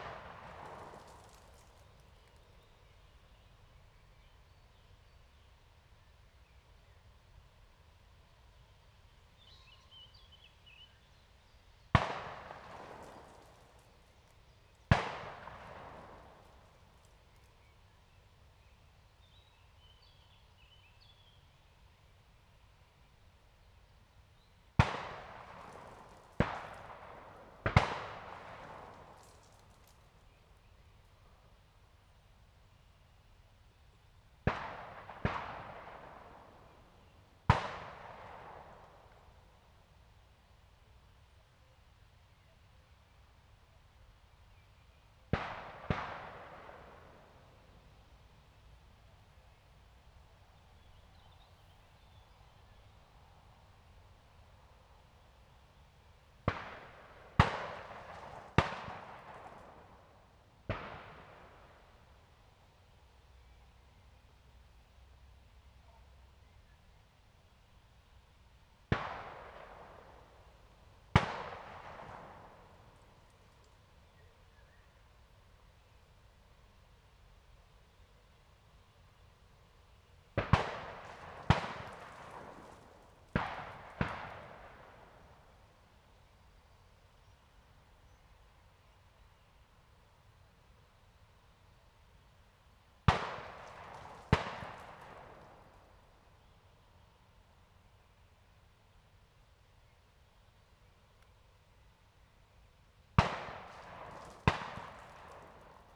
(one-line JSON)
{"title": "aleja Spacerowa, Siemianowice Śląskie, Polska - shooting range", "date": "2019-05-26 11:30:00", "description": "close to the shooting range\n(Sony PCM D50 DPA4060)", "latitude": "50.32", "longitude": "19.03", "altitude": "281", "timezone": "GMT+1"}